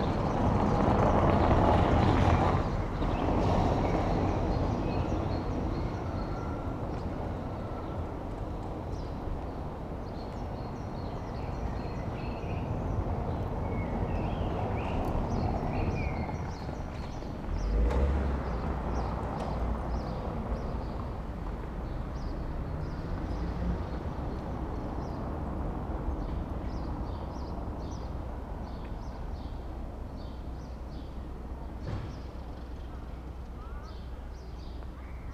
Berlin: Vermessungspunkt Friedel- / Pflügerstraße - Klangvermessung Kreuzkölln ::: 24.05.2011 ::: 10:37